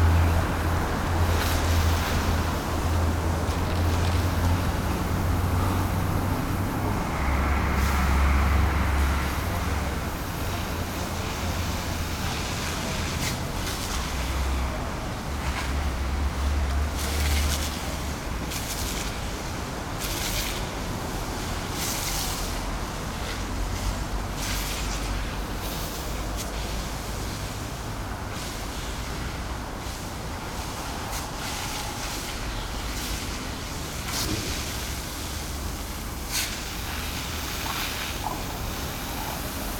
lisbon, av. da liberdade - workers cleaning street
workers cleaning street and walkways with water at night.
Lisbon, Portugal